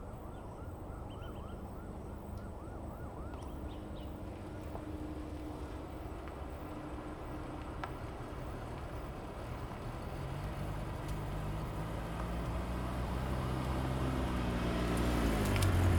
三湖村, Xihu Township - Near the high speed railway
Near the high speed railway, There is a sound from the highway, Police car sound, Dog sounds, High-speed railway train passing through, Bird call, Zoom H2n MS+XY
Xihu Township, 119縣道